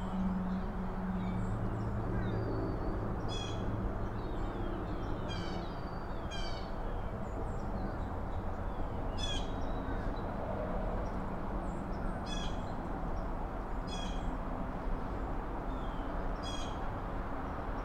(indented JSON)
{
  "title": "Upper Deerfield Township, NJ, USA - park drive",
  "date": "2016-12-04 09:20:00",
  "description": "geese, mallards, blue jays and other birds compete with nearby road traffic noise",
  "latitude": "39.45",
  "longitude": "-75.23",
  "altitude": "1",
  "timezone": "GMT+1"
}